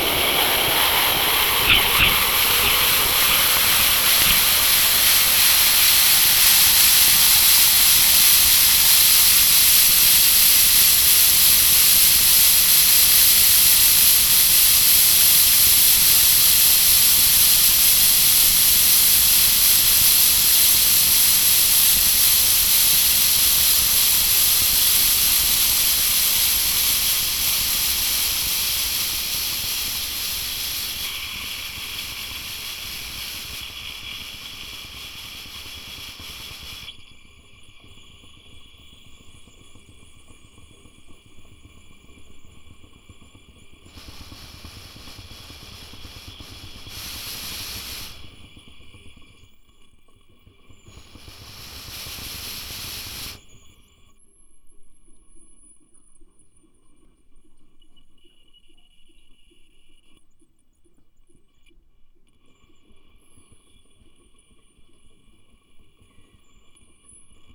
the inflow of hot water was shut down today. the faucet was making really strange hissing and gargling sounds. i moved the handle a little bit to get different sounds and dynamics